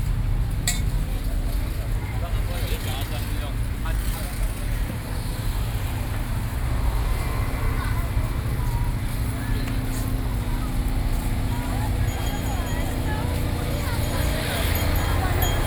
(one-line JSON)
{"title": "Bali District, New Taipei City - at the seaside park", "date": "2012-07-01 17:17:00", "latitude": "25.16", "longitude": "121.43", "altitude": "6", "timezone": "Asia/Taipei"}